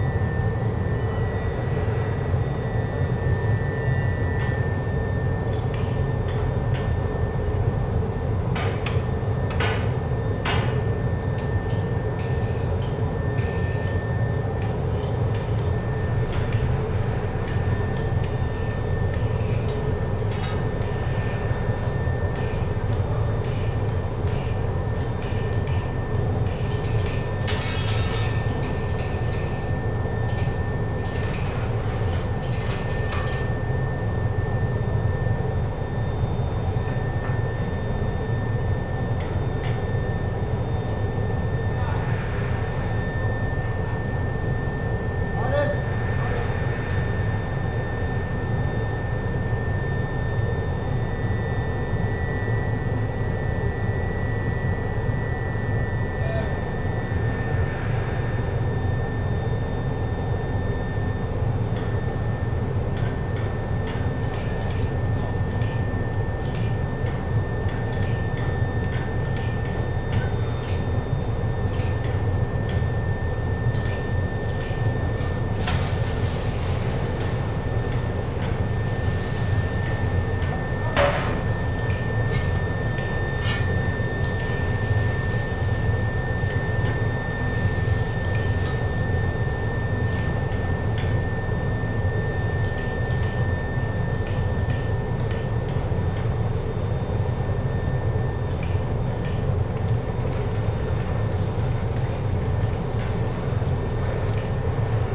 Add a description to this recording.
another recording inside of the steel factory - here: the pour off of the melted steel, soundmap nrw/ sound in public spaces - in & outdoor nearfield recordings